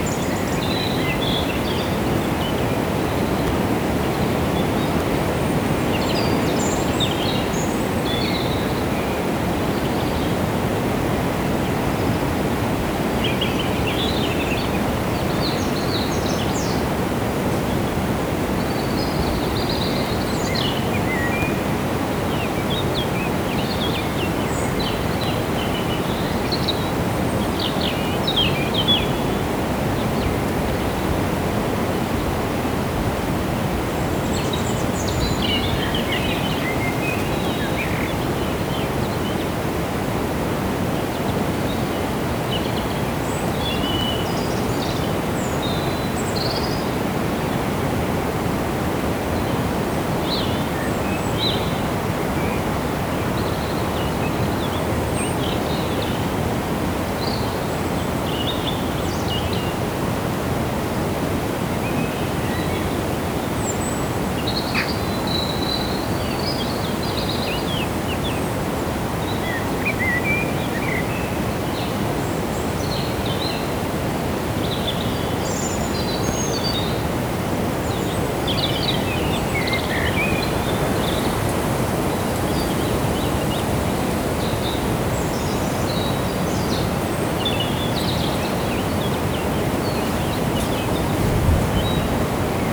Fermignano PU, Italia - Sosta nel sentiero
Ho usato uno Zoom H2n con il filtro antivento nuovo di pacca.